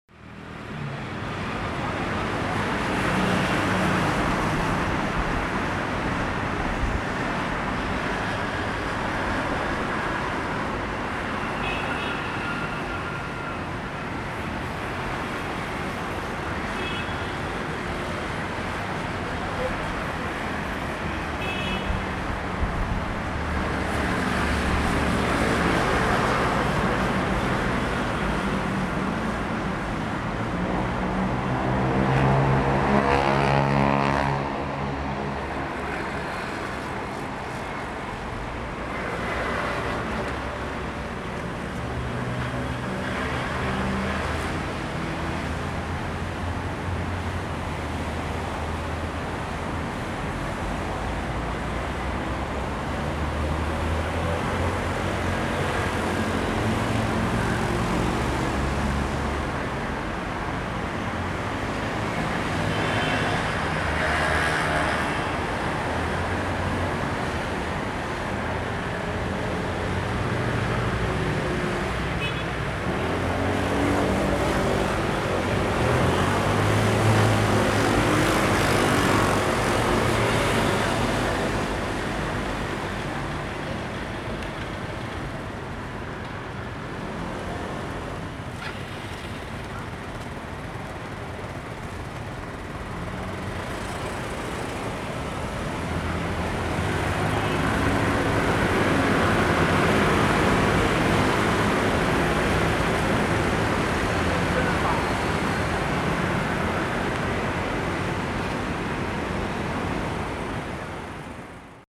National Sports Complex Station - Traffic noise
Traffic noise, Sony ECM-MS907, Sony Hi-MD MZ-RH1
高雄市 (Kaohsiung City), 中華民國, 25 February 2012, ~19:00